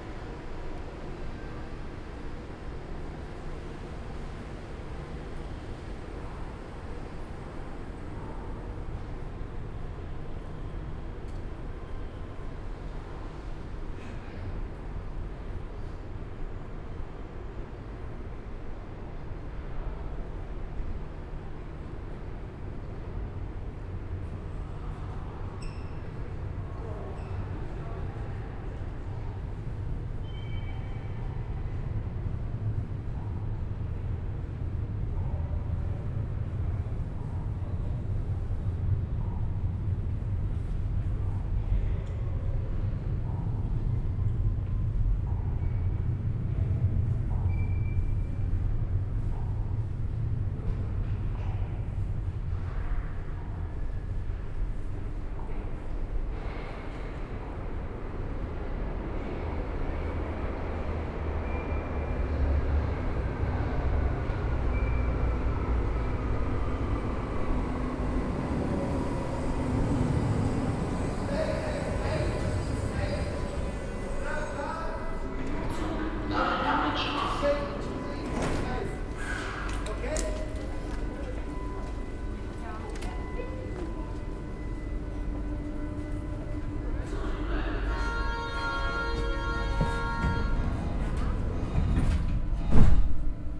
Berlin Alexanderplatz Station walk in pandemic times. Only few people around at a Wednesday around midnight.
(Sony PCMD50, DPA 4060)
Berlin, Germany, April 2021